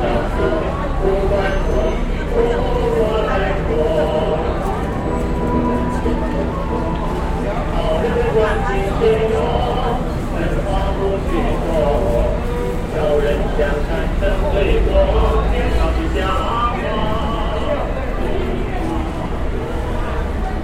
Hong-Kong, kung fu monks banned speech in china